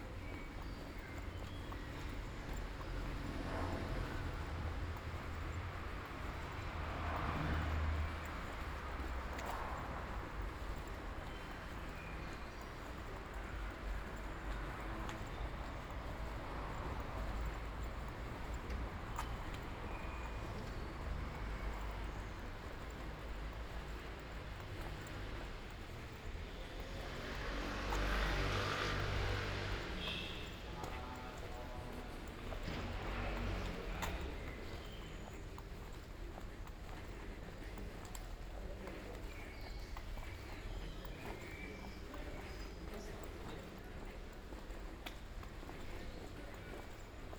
"Coucher de soleil au parc Valentino, rive droite du Pô, deux mois après, aux temps du COVID19": soundwalk
Chapter LXXXI of Ascolto il tuo cuore, città. I listen to your heart, city
Tuesday, May 19th 2020. San Salvario district Turin, to Valentino, walking on the right side of Po river and back, two months after I made the same path (March 19), seventy days after (but day sixteen of Phase II and day 2 of Phase IIB) of emergency disposition due to the epidemic of COVID19.
Start at 8:36 p.m. end at 9:25 p.m. duration of recording 48’41”. Local sunset time 08:55 p.m.
The entire path is associated with a synchronized GPS track recorded in the (kmz, kml, gpx) files downloadable here:
19 May 2020, 20:36